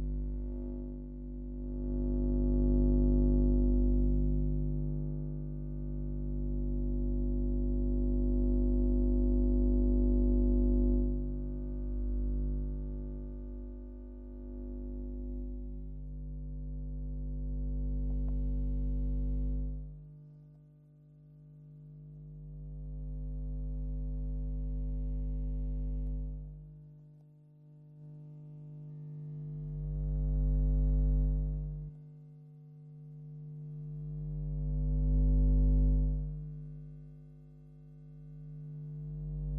Fisksätra, Nacka, Suède - PickUP-Bloc-PN
Son émis par le bloc d'alimentation du passage à niveau.